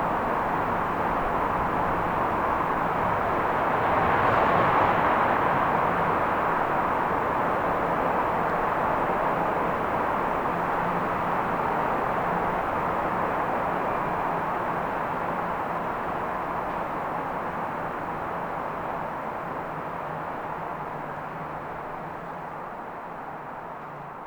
A rare occasion to witness a Friday afternoon with the entire Commercial Court empty. This popular location usually gathers groups of locals and tourists to a begin their weekend festivities. Even looking into some of the bars, they still had their St. Patrick decorations up.
Northern Ireland, United Kingdom